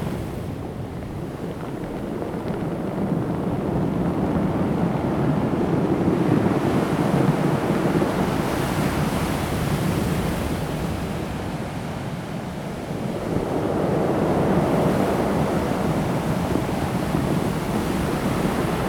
Sound of the waves, Rolling stones
Zoom H2n MS +XY
Daren Township, 台26線, 23 March 2018